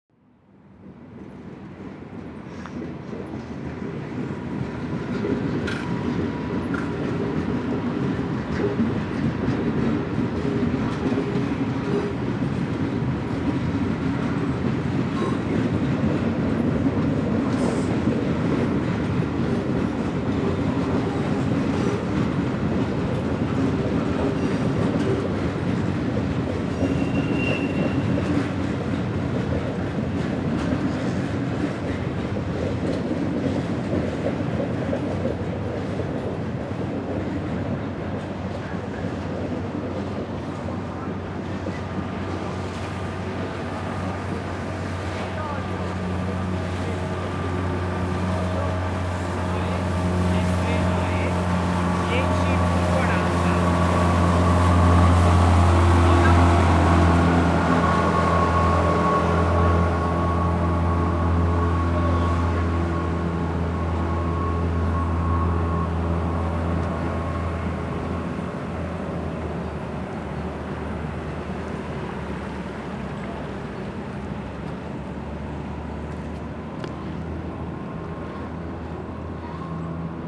In Venice at the water side near the station in the vening - the sounds of boats and trains passing by
intternational soundscapes
ambiences and art environments